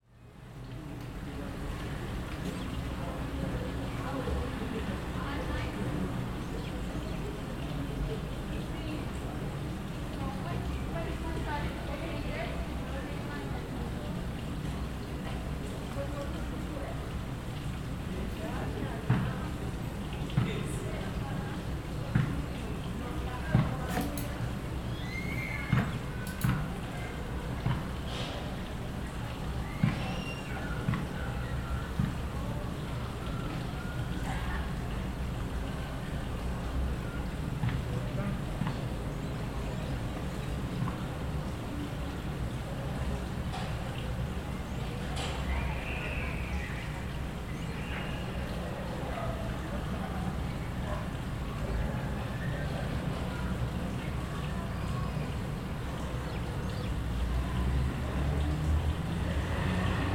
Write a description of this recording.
TONIC OR FUNDAMENTAL SOUND: WIND, SOUND SIGNALS: VOICES, BALL BOUNCE, GIRL SCREAMS, BIRDS, CARS, MOTORCYCLE, CAR HORN, CAR ENGINE, MOTORCYCLE ENGINE, SOUND MARK: URBAN